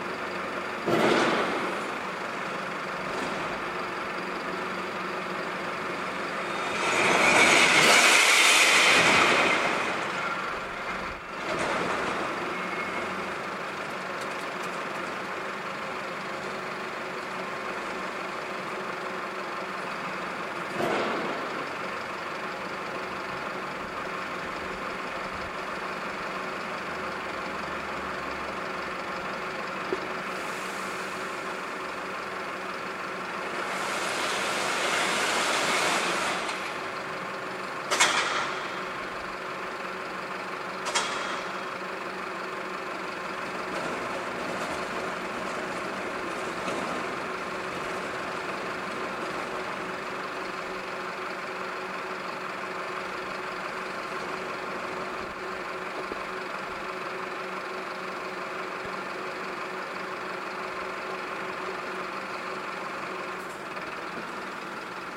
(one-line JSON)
{"title": "Staré Mesto, Slovenská republika - garbage men", "date": "2013-08-12 08:00:00", "description": "typical sound (noise) from 01:10 min", "latitude": "48.16", "longitude": "17.11", "altitude": "156", "timezone": "Europe/Bratislava"}